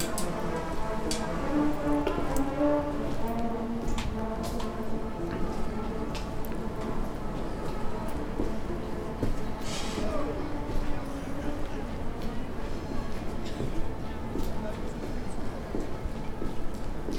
Václavské náměstí Praha, Česká republika - Rumanian Gypsy street musicians
Three musicians from South of Romania playing brass.